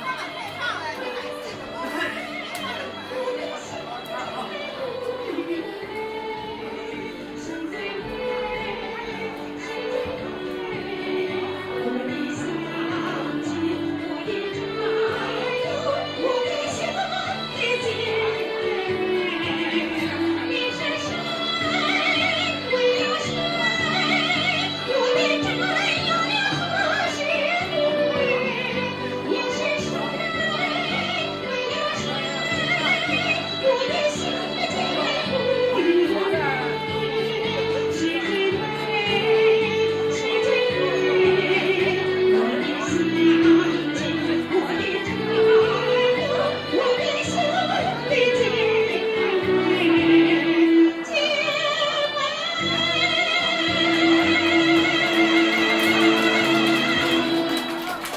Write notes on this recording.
recorded in nov 07, in the early evening - on the way to the main temple, different ensembles of amateur musicians performing for themselves and passing visitors. some groups sing in chorus acoustic, other perform with battery amplified karaoke systems - footwalk no cut, international city scapes - social ambiences and topographic field recordings